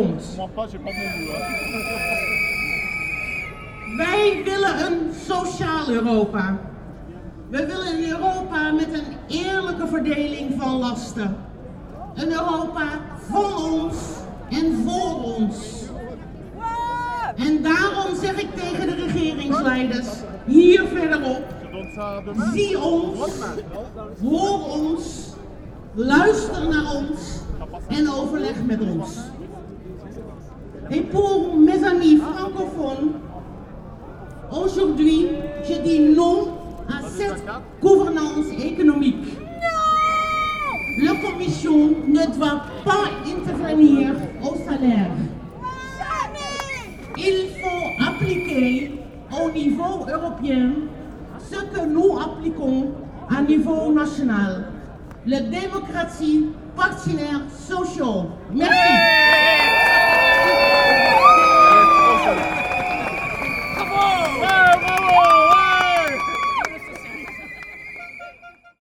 Brussels, Rue de la Loi, European demonstration near the European Parliament.
Manifestation Rue de la Loi, près du Parlement.